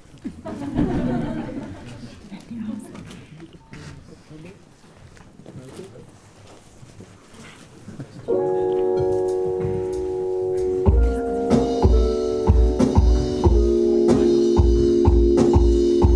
Concert at Der Kanal, Weisestr. - Der Kanal, Das Weekend zur Transmediale: elle p.
elle p. plays solo at DER KANAL. the young berlin based electronic craftswoman and singer made us dance and any aching tooth could be forgotten.
Deutschland, European Union, 28 January 2011